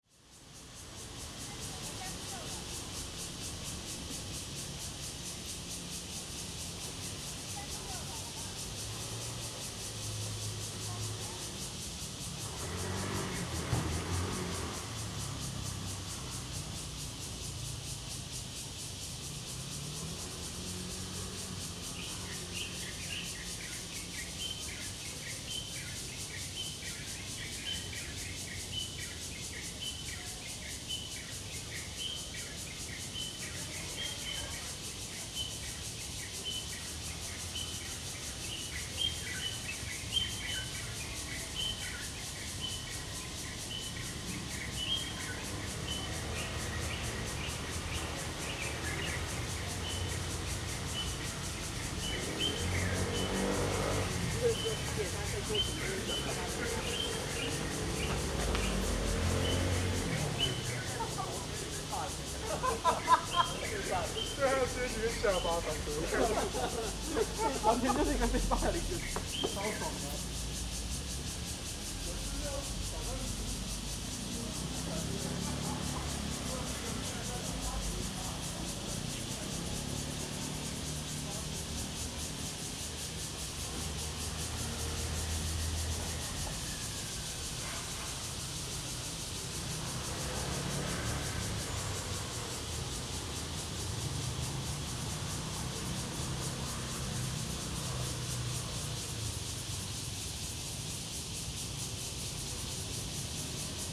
Xinlong Park, Da’an Dist. -, Cicadas cry and Birdsong
in the Park, Cicadas cry, Bird calls, Traffic Sound
Zoom H2n MS+XY